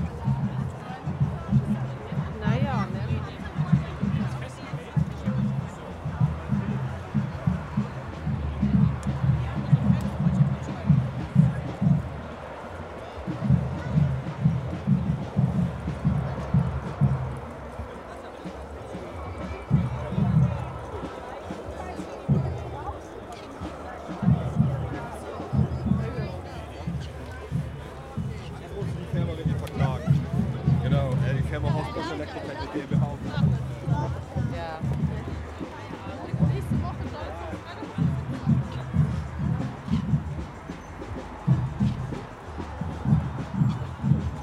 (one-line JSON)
{"title": "wilhelmsburger reichsstrasse, 31.10.2009", "date": "2009-11-01 11:19:00", "description": "eine demonstration gegen den bau einer autobahn, der kirchdorf süd isolieren würde", "latitude": "53.49", "longitude": "10.00", "altitude": "2", "timezone": "Europe/Berlin"}